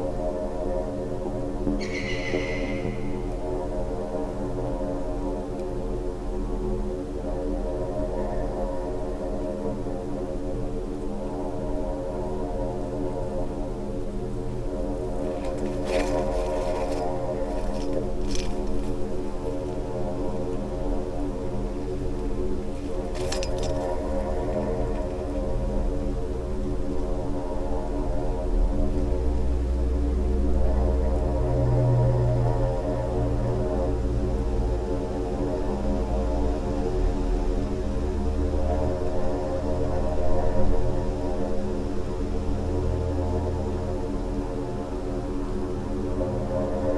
quarry, Marušići, Croatia - void voices - stony chambers of exploitation - borehole
air, wind, sand and tiny stones, broken reflector, leaves, flies, birds, breath, words and ... voices of a borehole